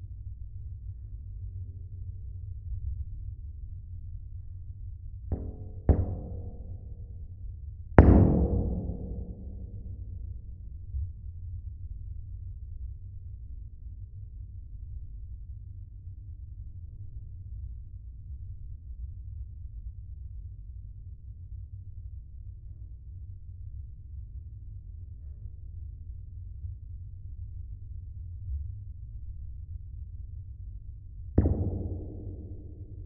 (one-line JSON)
{"title": "Kattendijkdok-Oostkaai, Antwerpen, Belgium - Aluminium sheet wall", "date": "2021-05-21 15:00:00", "description": "Sounds of an aluminium sheet wall when hit with fingers.\nRecorded with LOM Geofon going to a Zoom H4n.", "latitude": "51.24", "longitude": "4.41", "altitude": "3", "timezone": "Europe/Brussels"}